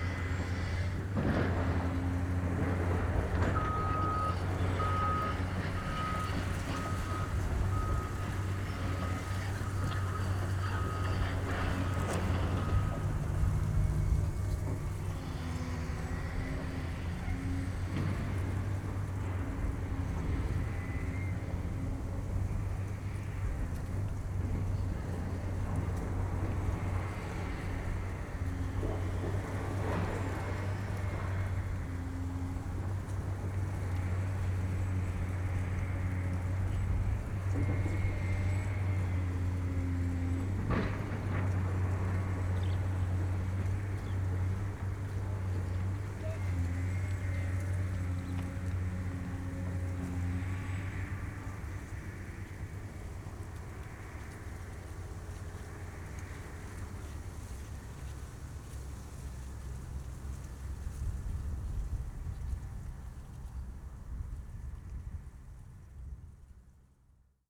a few meters from the previous location, sounds of heavy duty trucks and an excavator
(Sony PCM D50, DPA4060)